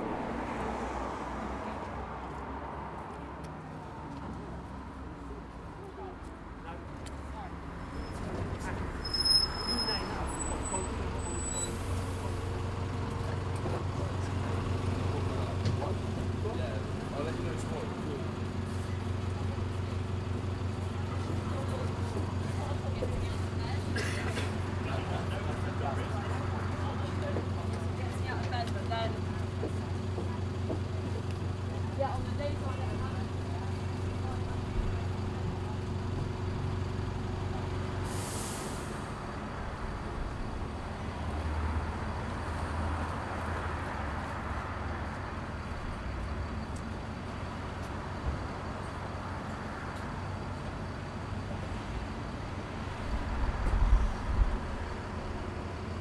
Burgess Road, Southampton, UK - 053 Night bus